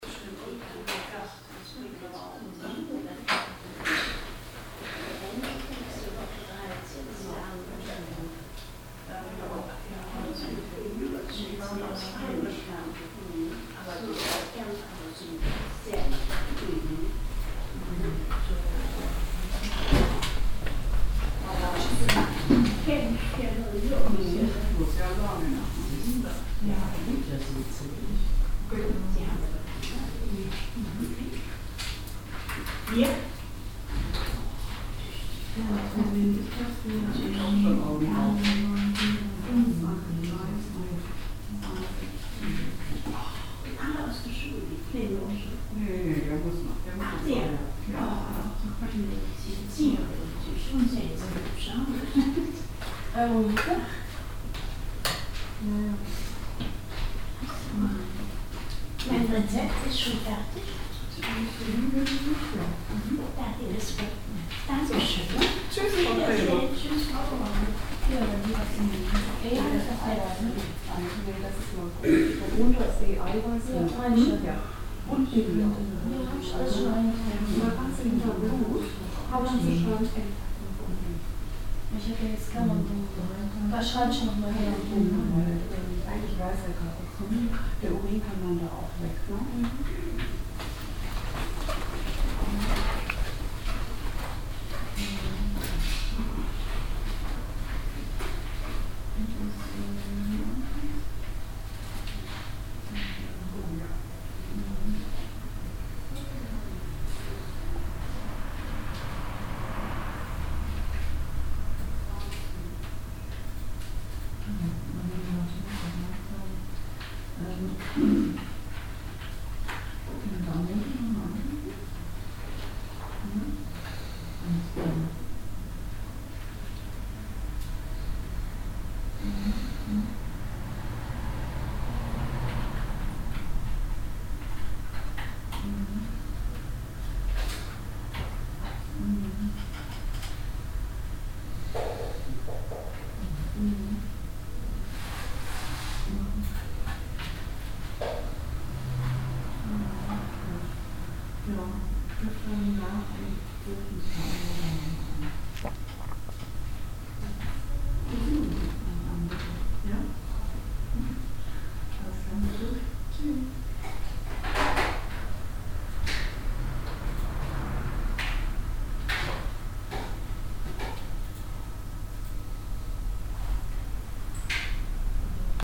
soundmap nrw: social ambiences/ listen to the people in & outdoor topographic field recordings
Cologne, Germany, 19 August 2009